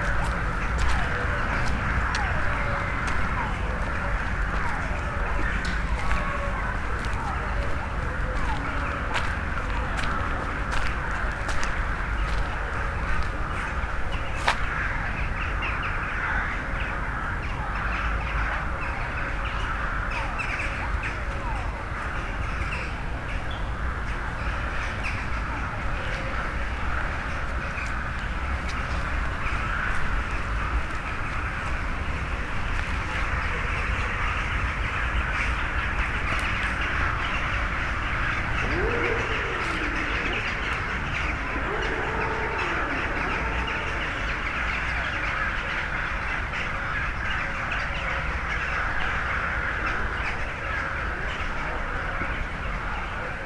City Park, Yambol, Bulgaria - flock of crows
recording a flock of crows as they approach one of their favorite sleeping spot.
29 July 2014